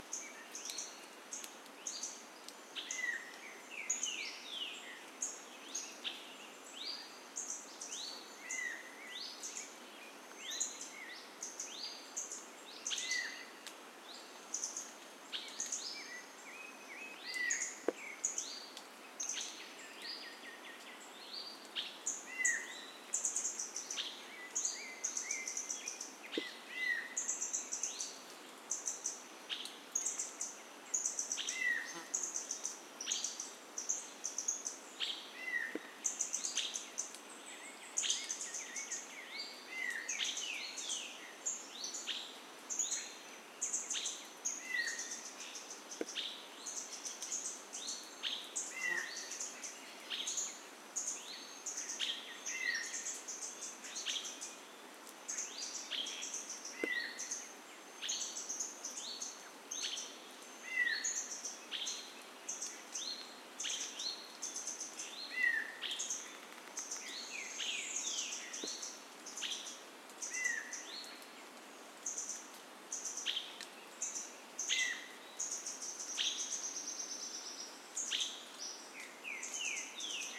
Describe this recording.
Ambience of the jungle in Misiones during the day. I´m on a path near Salto Horacio, in the sorroundings of Parque Provincial Saltos del Moconá.